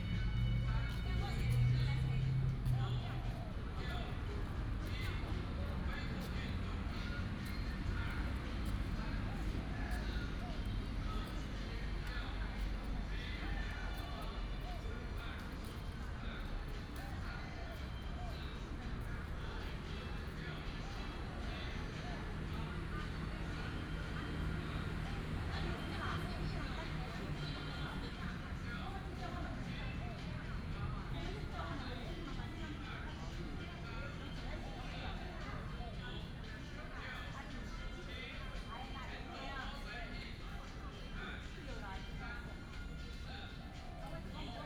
in the Park, traffic sound, birds sound, Many elderly people are doing aerobics
Taoyuan District, Taoyuan City, Taiwan